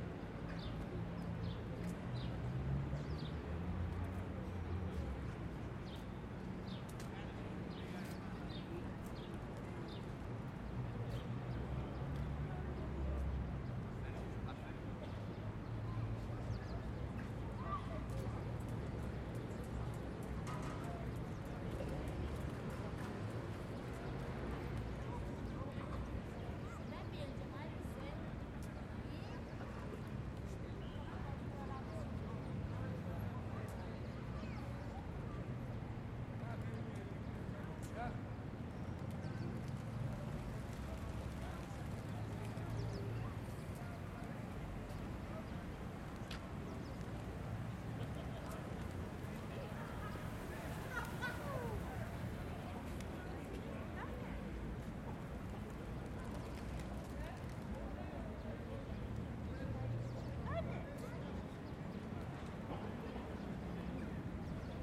Ανθυπασπιστού Μιλτιάδη Γεωργίου, Ξάνθη, Ελλάδα - Central Square/ Κεντρική Πλατεία- 10:15
People talking, people passing by, woman laughing, distant traffic.